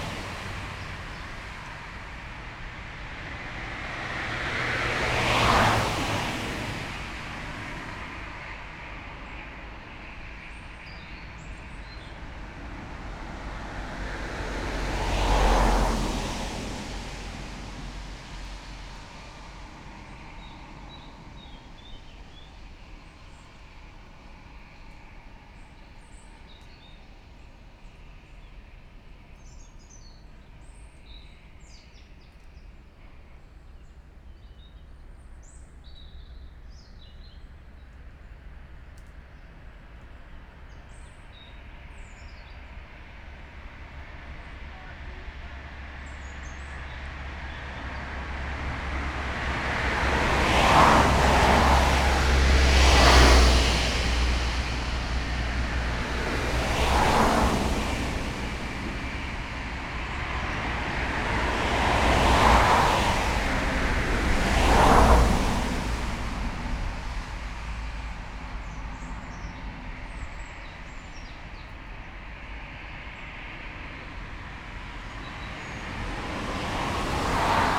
Grčna, Nova Gorica, Slovenia - Right before entering Nova Gorica
Recorded with Jecklin disk and Lom Uši Pro microphones with Sound Devices MixPre-3 II recorder, cca. 2-3m from the road in the bushes. Forgot to split audio left and right.